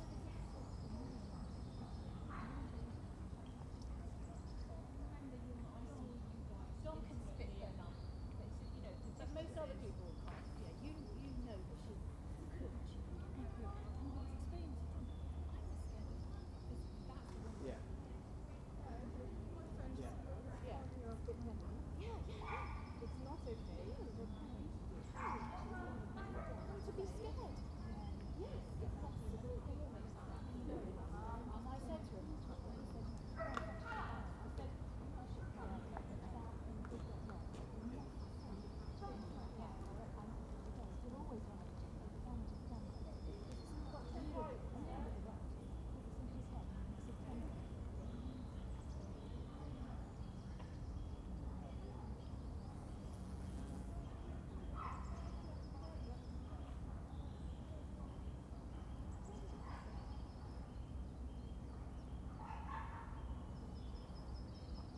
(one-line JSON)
{"title": "Hampstead Heath, London - Hampstead Heath", "date": "2021-03-30 12:25:00", "description": "Near the big dead tree\n19°C\n6 km/hr 120", "latitude": "51.57", "longitude": "-0.17", "altitude": "121", "timezone": "Europe/London"}